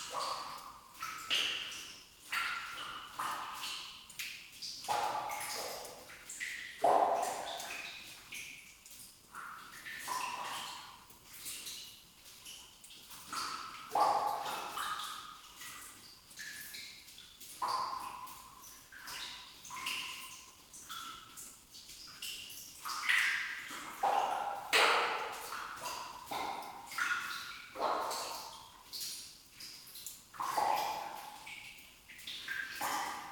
In an abandoned iron underground mine, sounds of the drops falling into a gigantic water pool.
20 March 2016, ~10:00